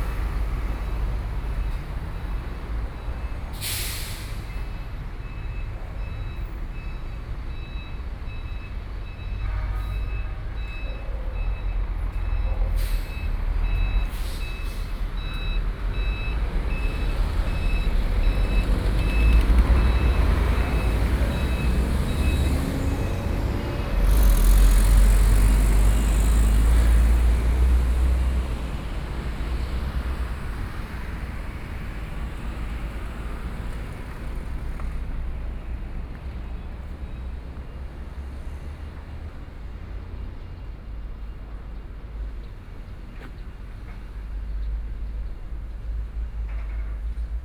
The main road, Traffic Sound, Washing plant, Chicken farm
Kaohsiung City, Taiwan, 14 May 2014